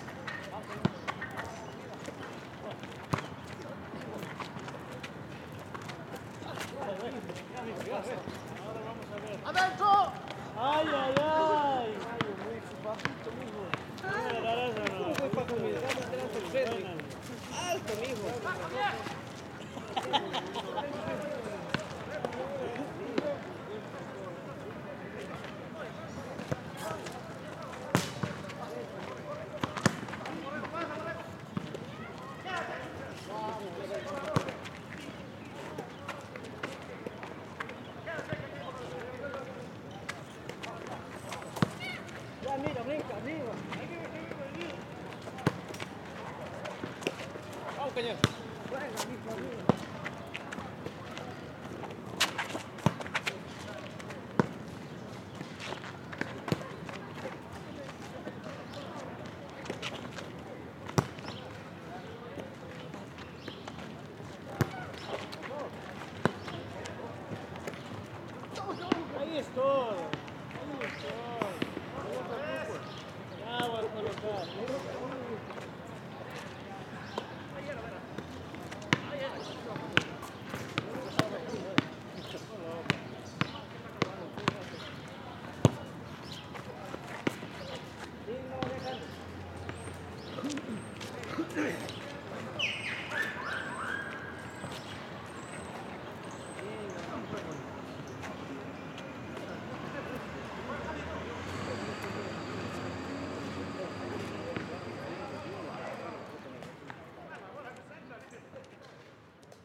New York, NY, USA - Volleyball match in Evergreen Park, Queens
Sounds from a volleyball match in Evergreen Park, Queens.
United States